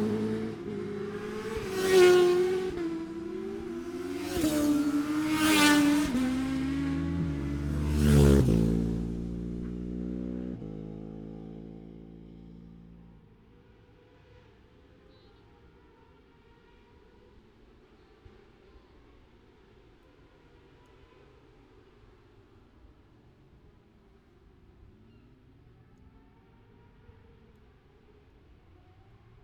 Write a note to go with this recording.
1000cc practice ... odd numbers ... Bob Smith Spring Cup ... Olivers Mount ... Scarborough ... open lavaliers mics clipped to sandwich box ...